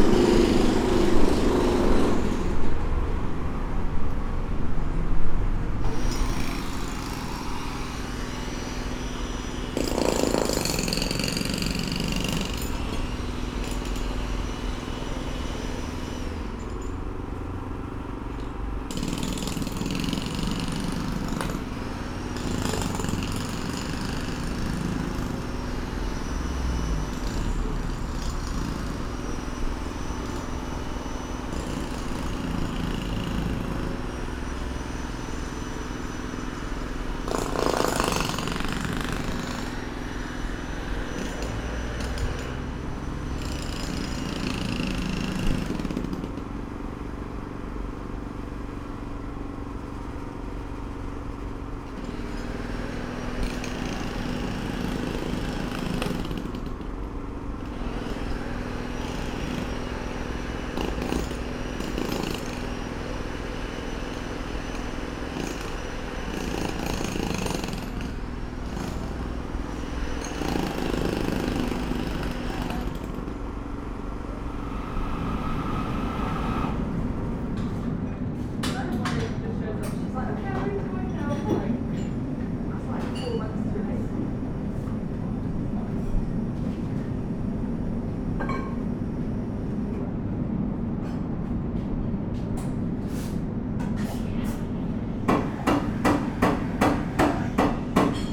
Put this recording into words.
A short sound walk through a busy shopping area with road works into a coffe shop. MixPre 6 II with 2 Sennheiser MKH 8020s.